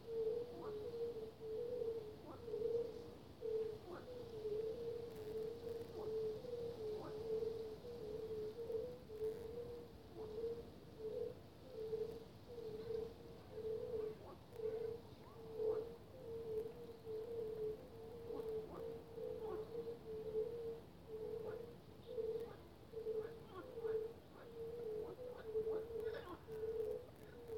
You can hear birds singing, frogs croaking and the sounds of other living creatures in the swamp pond.
30 May, 16:30